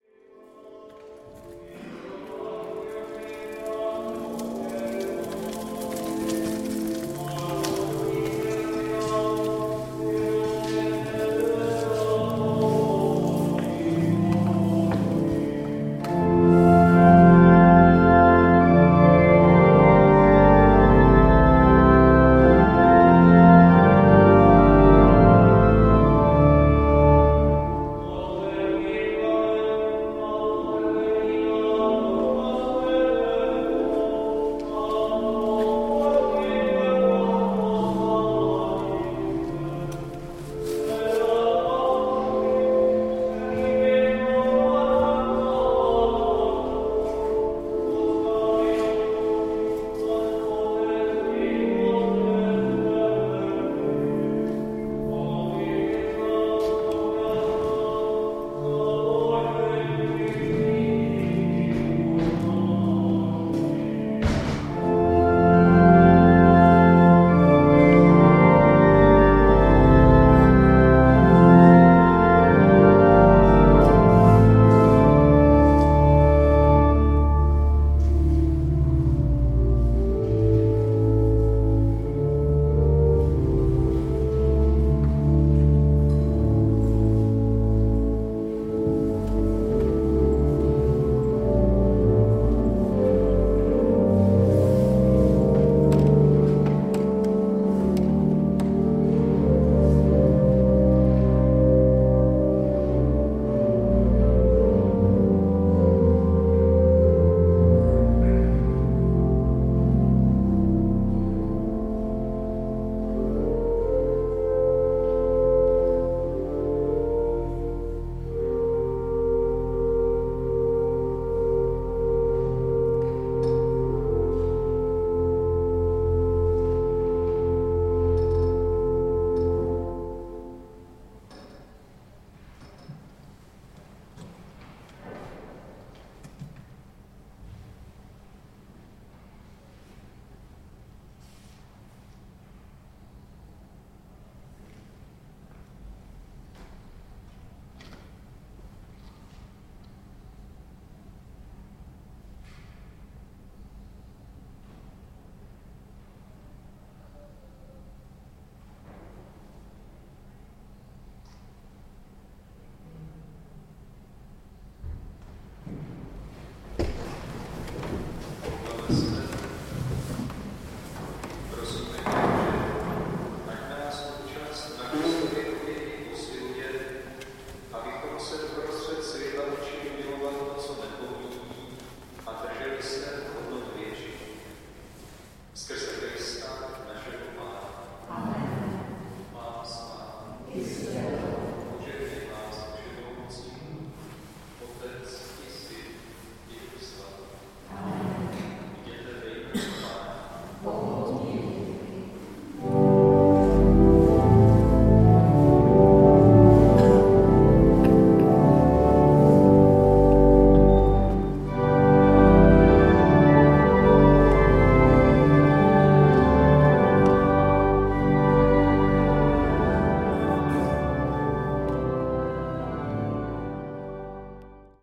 Saint Havel Church
Founded in the 13th century it was one of the four Old Town parish churches. It was an important centre of the reformation movement and Jan Hus and Jan of Nepomuk also preached here. In the chapel, there is a tomb of the well-known Bohemian Baroque artist Karel Škréta. Since the middle ages Pragues best-known market has been held in Havelská Street
Prague, Czech Republic, January 2011